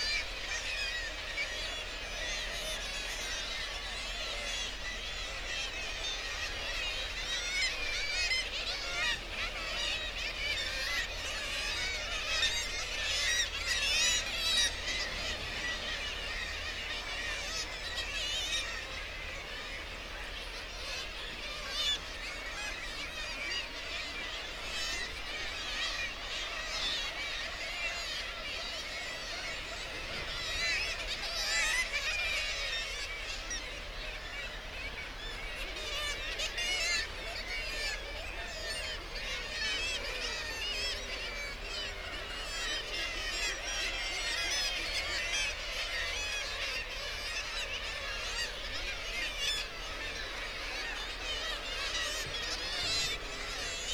Bempton, UK - Kittiwake soundscape ...
Kittiwake soundscape ... RSPB Bempton Cliffs ... kittiwake calls and flight calls ... guillemot and gannet calls ... open lavalier mics on the end of a fishing landing net pole ... warm sunny morning ...